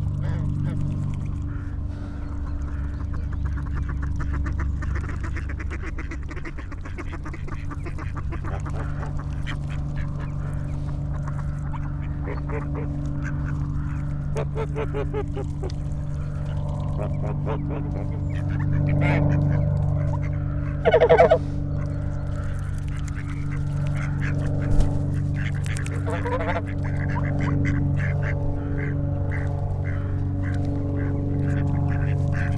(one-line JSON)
{"title": "Ducks and a Plane - Golden Valley, Malvern, UK", "date": "2020-11-04 14:00:00", "description": "I am sitting on a bench surrounded by geese and ducks so close they are treading on the mics sometimes. In front of me is a large lake and in the background a half a mile away sits the dark mass of the Malvern Hills. A plane wanders up, probably from Staverton Airfield not too far away. It practices a few manoeuvers and suddenly makes a sharp turn changing the engine note. The geese continue to beg for my lunch.", "latitude": "52.03", "longitude": "-2.33", "altitude": "58", "timezone": "Europe/London"}